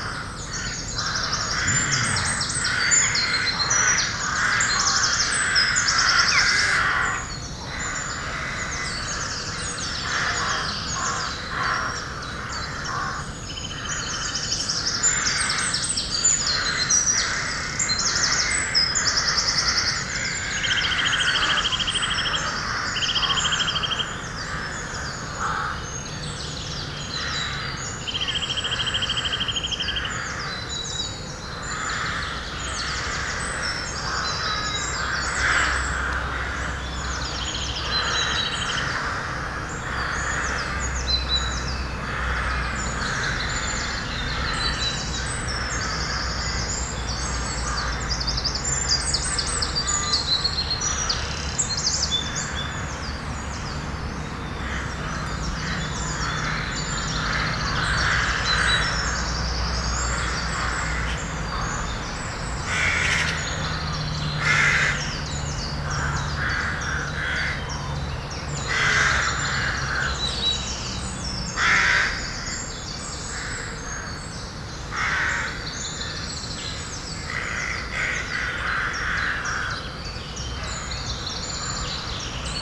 Hautmont, France - Unhappy crows
Into the abandoned and literally pulverized Haumont bunker, a large colony of crows keeps an eye on the babies on the nests. Birds are very unhappy I'm here. During a small storm, with a very unfriendly neighborhood, a completely destroyed bunker and all this crows, I just find the place oppressive.